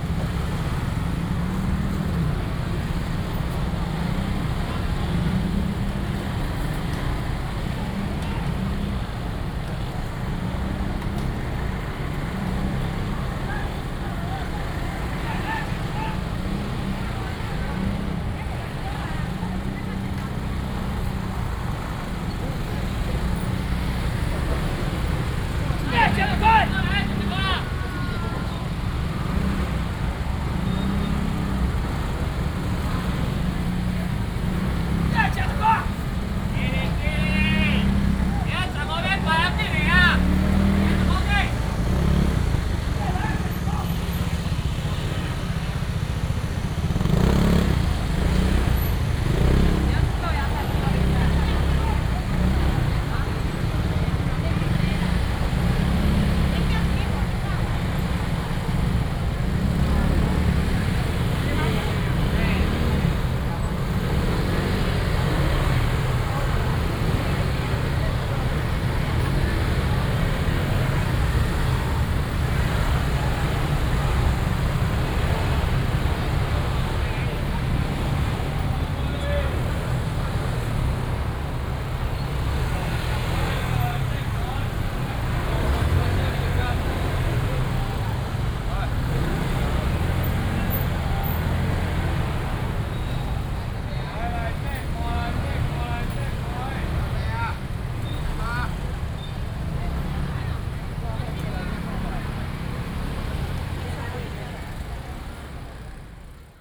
Sec., Jiangning Rd., 板橋區, New Taipei City - Walking in the traditional market
Walking through the traditional market, Cries of street vendors, A large of motorcycles and people are moving in the same street